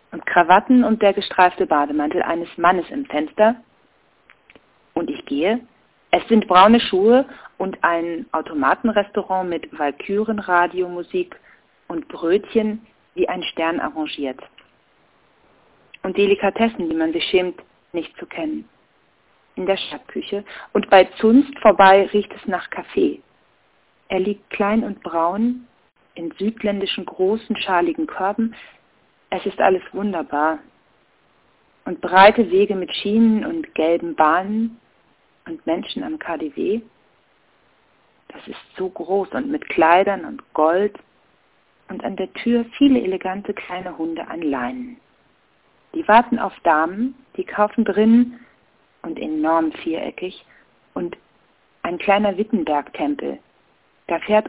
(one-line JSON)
{"title": "Riesen U - Irmgard Keun: Das Kunstseidene Mädchen (1932) 02.12.2007 19:12:31", "latitude": "52.50", "longitude": "13.34", "altitude": "38", "timezone": "GMT+1"}